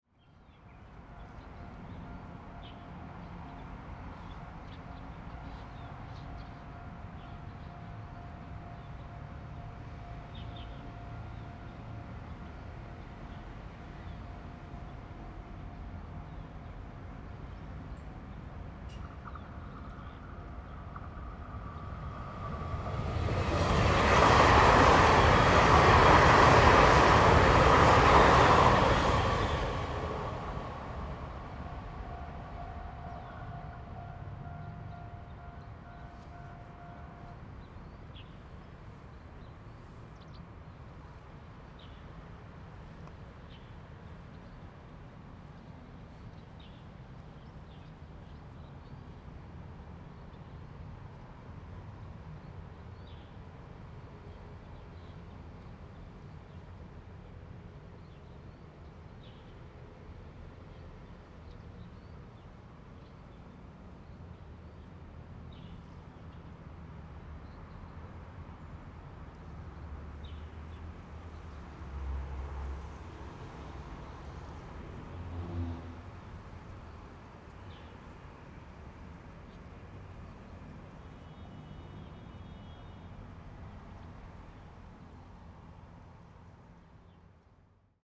森林之歌, Chiayi City - Next to the railway track
Next to the railway track, Traffic sound, bird sound, The train runs through
Zoom H2n MS+XY + Spatial audio
West District, Chiayi City, Taiwan, 18 April 2017, 12:04